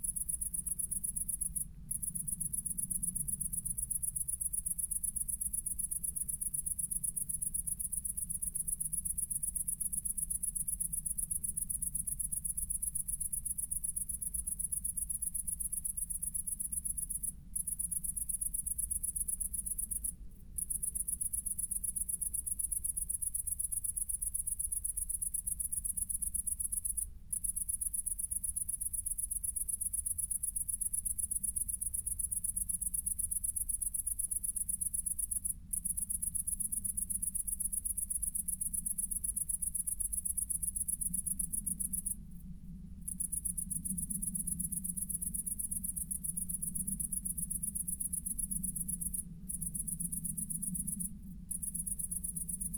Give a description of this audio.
lonely cricket at night, aircraft crossing. Since some years, aircrafts from/to Frankfurt can be heard all the time due to increased traffic and cheap fares, (Sony PCM D50, Primo EM172)